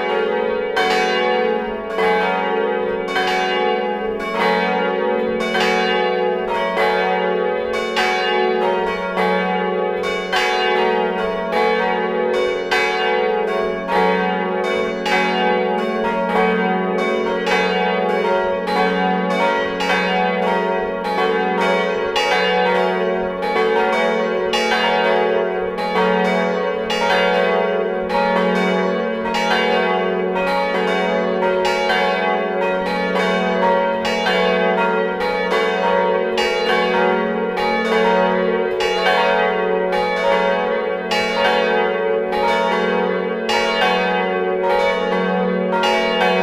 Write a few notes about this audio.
La Hulpe bells, ringed manually with ropes. It's a very good ensemble of bells, kept in a good state by a passionnate : Thibaut Boudart. Thanks to him welcoming us in the bell tower.